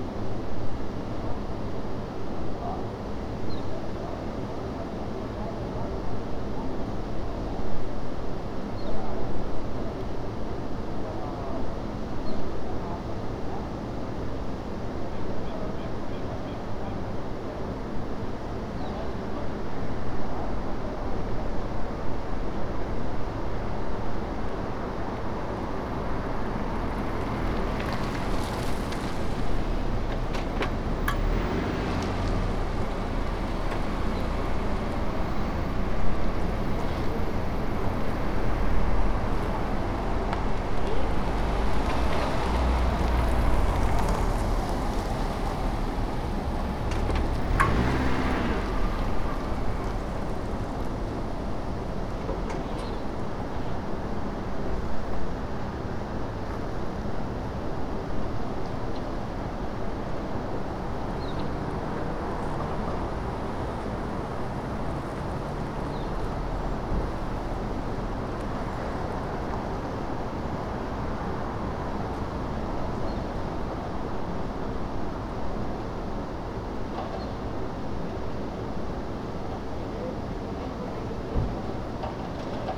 New Paces Ferry Rd NW, Atlanta, GA, USA - In The Middle Of Town
The middle of Vinings, Georgia. The recorder was set down on the sidewalk to capture the general ambiance of the area. The sound of traffic is quite prominent, and cars can be heard driving in close proximity to the recorder. A few sounds can be heard from the nearby shops, including a work team cleaning gutters in the distance. A few people also passed by the recorder on foot. Captured with the Tascam dr-100mkiii.
Georgia, United States of America